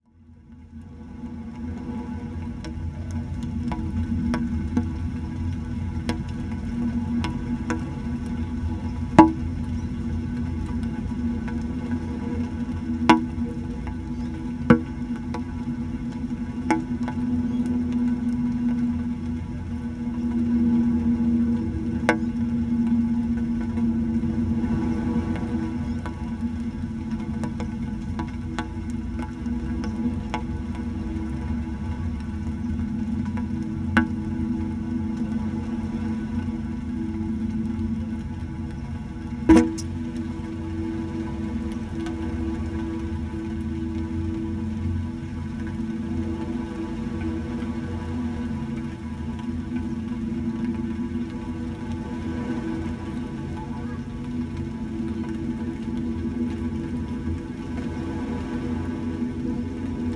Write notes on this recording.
slight rain on the beach recorded in a short tube, (zoom h2, okm)